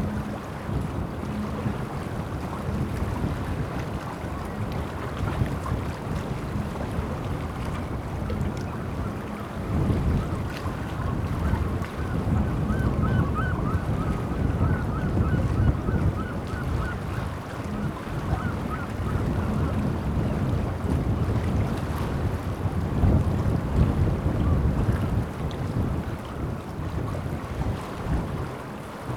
France, Roscoff, estacade - Roscoff, estacade
Pour écouter la ville se réveiller depuis l'estacade. Pour le plaisir de poser un son là. Même si la brise ne nous en laisse peu percevoir.